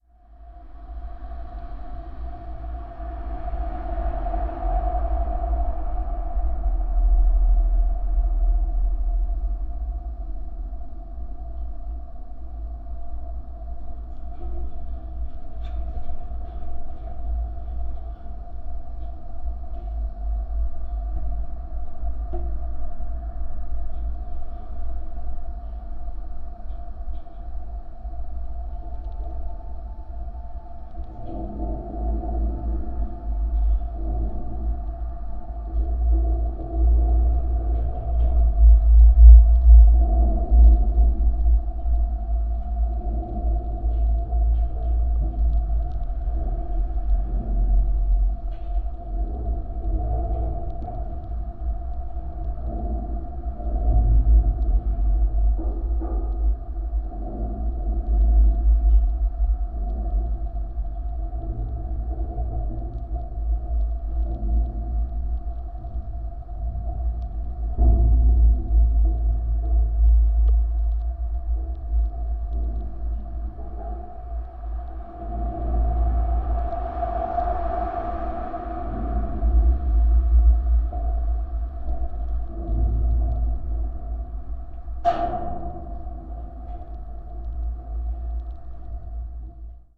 berlin, karpfenteichstr., bridge
ontact mic on bridge. no trains seem to pass here, rust on the rails